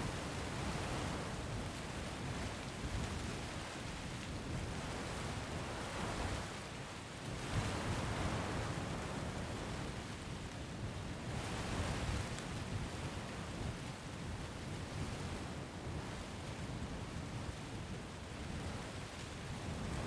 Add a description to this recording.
record by: Alexandros Hadjitimotheou